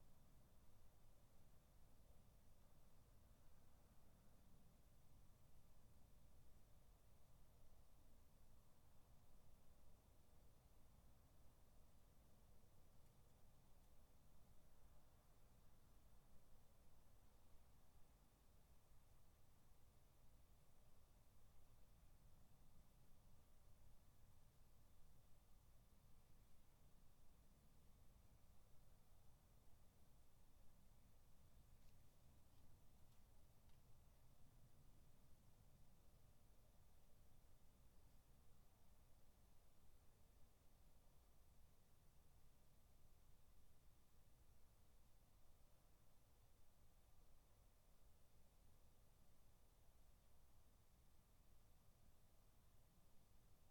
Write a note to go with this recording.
3 minute recording of my back garden recorded on a Yamaha Pocketrak